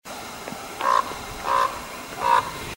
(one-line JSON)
{"title": "Listwjanka, Siberia, crow, recorded by VJ Rhaps", "latitude": "51.86", "longitude": "104.88", "altitude": "513", "timezone": "Europe/Berlin"}